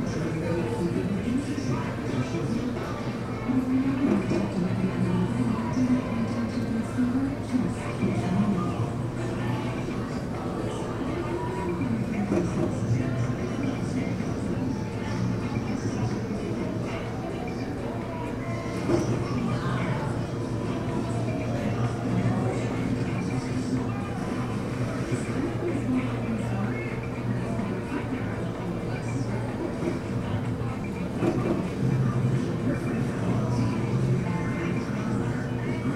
Strip District, Pittsburgh, PA, USA - greyhound station
greyhound station, pittsburgh
24 January 2011, 18:53